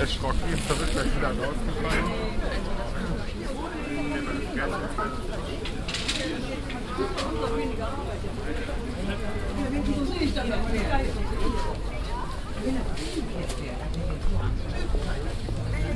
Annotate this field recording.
morgens auf dem wochenmarkt gang unter vordächern von verkaufsständen, soundmap nrw - sound in public spaces - in & outdoor nearfield recordings